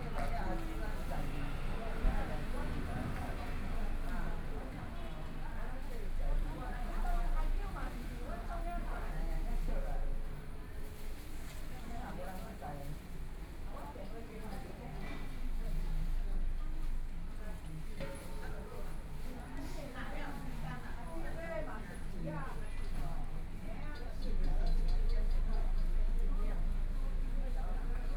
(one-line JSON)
{"title": "Fuxing Rd., Taitung - In the bus station", "date": "2014-01-16 10:26:00", "description": "In the bus station, Traffic Sound, Dialogue among the elderly, Dogs barking, Binaural recordings, Zoom H4n+ Soundman OKM II ( SoundMap2014016 -4)", "latitude": "22.75", "longitude": "121.15", "timezone": "Asia/Taipei"}